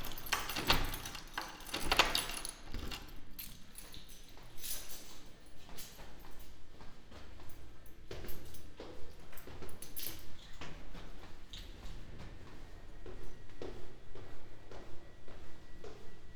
Ascolto il tuo cuore, città. I listen to your heart, city. Several chapters **SCROLL DOWN FOR ALL RECORDINGS** - “Outdoor market in the square one year later at the time of covid19”: Soundwalk
“Outdoor market in the square one year later at the time of covid19”: Soundwalk
Chapter CLXIX of Ascolto il tuo cuore, città. I listen to your heart, city.
Friday, April 23rd 2021. Shopping in the open air square market at Piazza Madama Cristina, district of San Salvario, Turin, one year after the same walk on the same date in 2020 (54-Outdoor market in the square); one year and forty-four days after emergency disposition due to the epidemic of COVID19.
Start at 11:27 a.m., end at h. 11: 43 a.m. duration of recording 16’23”
The entire path is associated with a synchronized GPS track recorded in the (kml, gpx, kmz) files downloadable here:
Torino, Piemonte, Italia, 23 April 2021